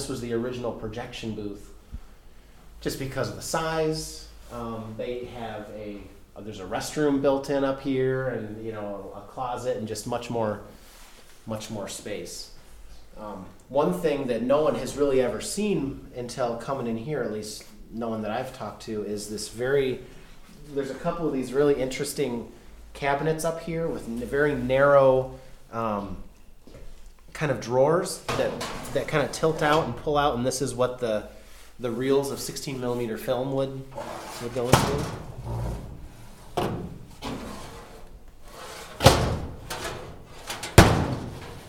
Vogue Theatre, River St., Manistee, MI, USA - Projector Booth
Tour of old projector booth, shortly before start of renovation project (theatre built in 1938). Voice of Travis Alden. Climbing ladder, sounds of old projector parts piled on floor and metal cabinets for film reels. Stereo mic (Audio-Technica, AT-822), recorded via Sony MD (MZ-NF810).
23 March 2011, ~12:00